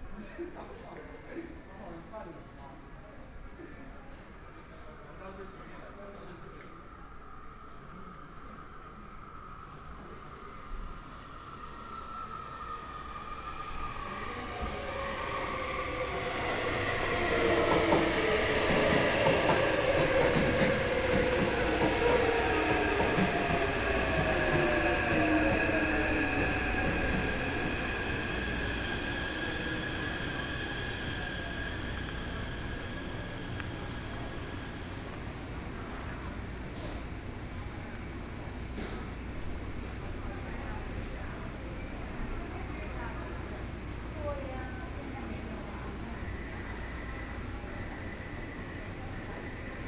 Guandu Station, Taipei City - In the Station
In the station platform, Children crying, Sound broadcasting, The distant sound of firecrackers, Train stops
Binaural recordings, Sony PCM D50 + Soundman OKM II
Taipei City, Taiwan, 22 April